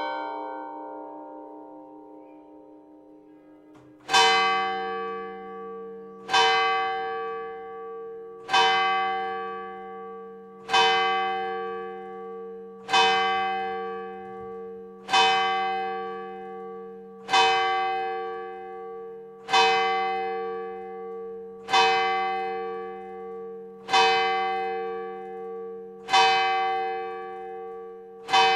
Carillon du Beffroi d'Aire-sur-la-Lys (Pas-de-Calais)
Prise de sons à l'intérieur du Beffroi.
Hauts-de-France, France métropolitaine, France, 2 June 2020